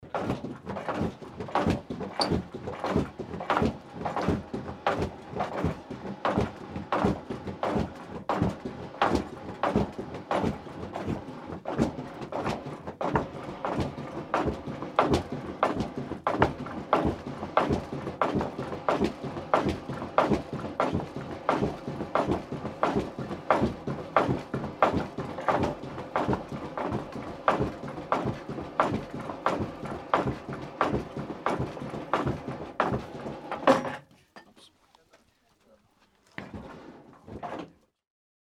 Bellows operated with the feet.
Umeå Municipality, Sweden